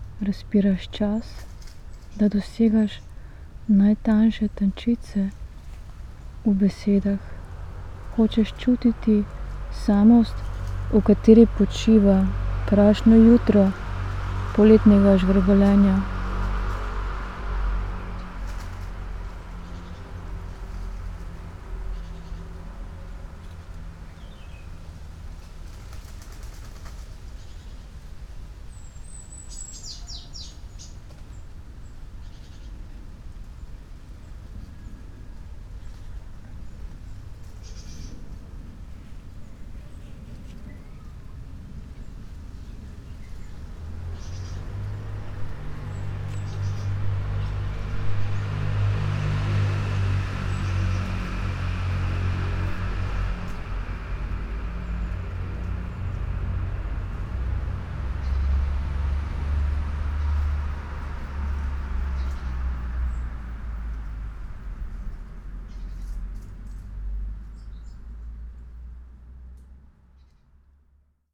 poems garden, Via Pasquale Besenghi, Trieste, Italy - sitting poem
čuteči, drsiš po njem
trenutki utripajoče ranjenosti
od drugega, od njega
ob sebi ...
čuteči drsiš po njem
trenutki utripajoče ranjenosti
od drugega
ob sebi hočeš še bližje
nastavljaš telo besede
razpiraš čas
da dosegaš najtanjše tančice
v besedah
hočeš čutiti samost
v kateri počiva prašno jutro poletnega žvrgolenja
while seated on a stump, birds and tree branches, spoken words
reading poems fragments on silences, written in summer mornings in 2013